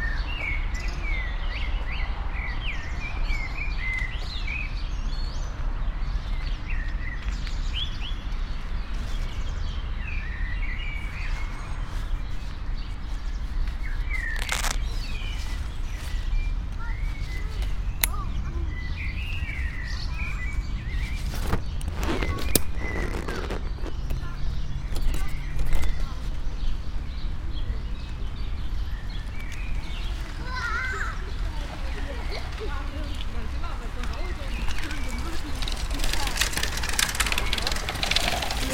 Alt-Treptow, Berlin, Germany - walk, umbrella
park and working halls sonicscape, birds, bicycles, people small talks, sandy path